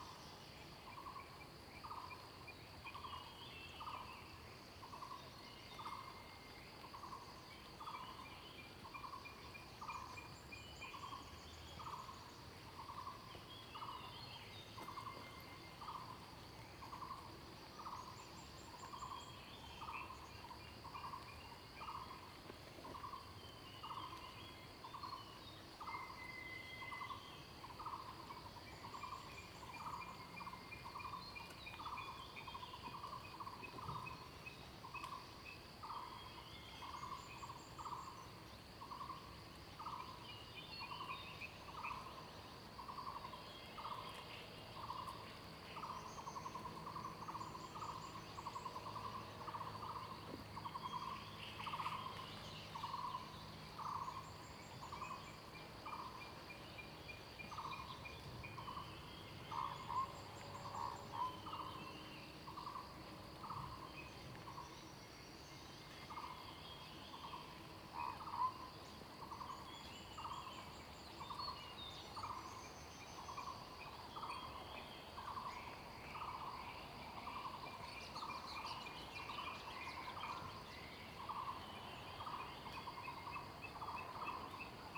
水上巷, 埔里鎮桃米里, Nantou County - In the morning
Morning in the mountains, Bird sounds, Traffic Sound
Zoom H2n MS+XY